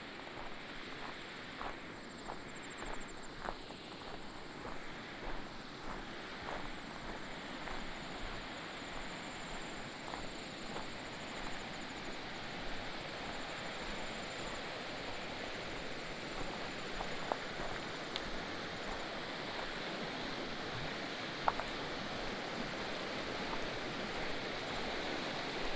金崙溪, Liqiu, Jinfeng Township - Stream sound
Stream sound, Bird cry